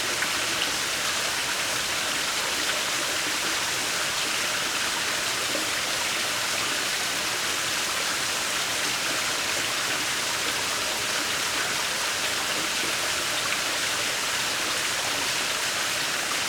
Salto de agua del torrente de la Tuta.